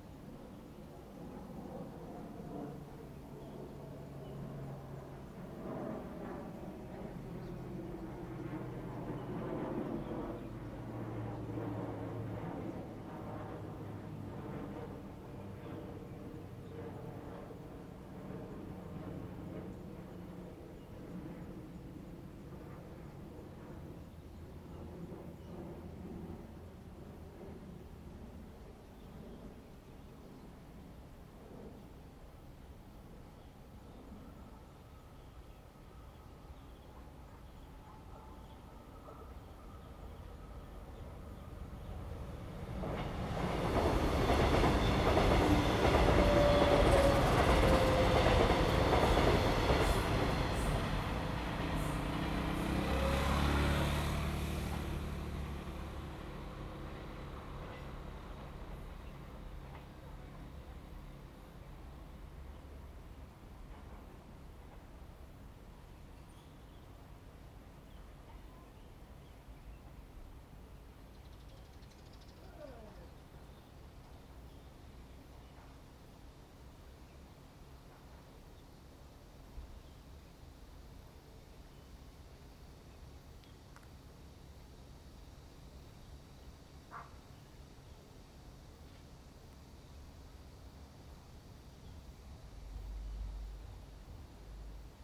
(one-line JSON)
{"title": "Ln., Xinnong St., Yangmei Dist. - near the railroad tracks", "date": "2017-08-11 17:41:00", "description": "Birds sound, train runs through, Traffic sound, The plane flew through, Near the railroad tracks, Binaural recordings, Zoom H2n MS+XY", "latitude": "24.91", "longitude": "121.16", "altitude": "162", "timezone": "Asia/Taipei"}